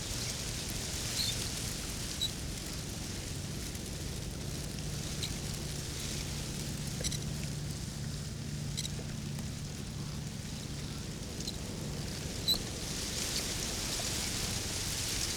Lithuania, Utena, last years's reed in water - last years's reed in water
sounds in the grass, near water
1 May